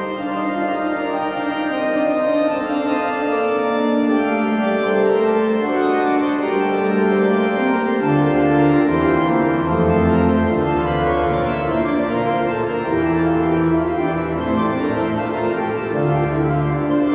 Centrum, Haarlem, Nederland - The Müller Organ
Two recordings made on Sunday July 12th 2015 in the Great Church, or Saint Bavo Church, in Haarlem.
Recorded with a Zoom H2. I could not prepare this recording and create a proper set-up; you might hear some noises in the 2nd piece caused by me moving the mic... but I liked the piece too much to turn this recording down.